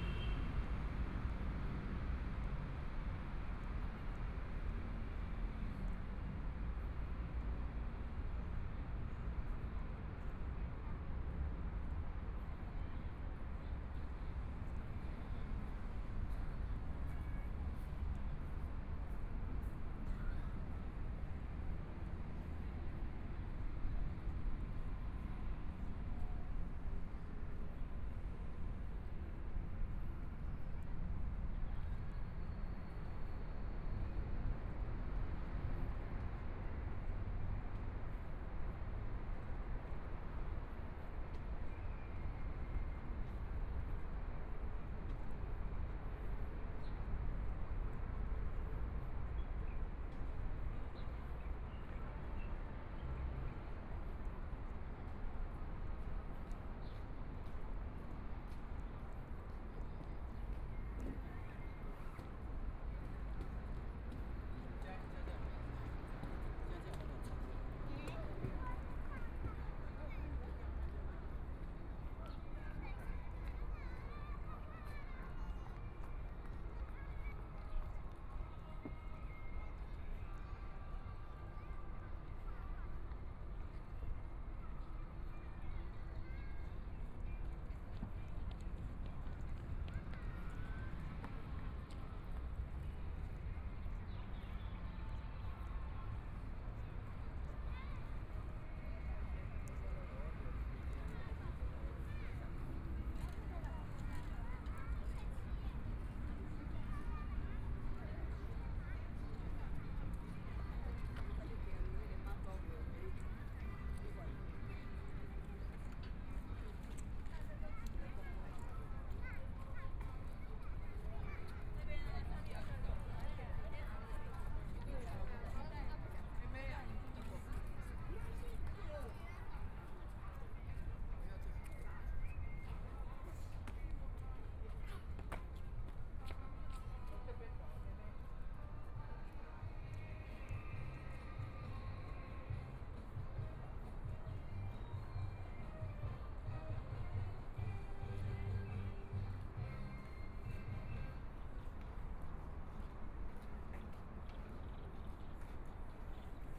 中山美術公園, Taipei City - Walking through the park
Walking through the park, Environmental sounds, Traffic Sound, Tourist, Clammy cloudy, Binaural recordings, Zoom H4n+ Soundman OKM II
10 February 2014, 15:51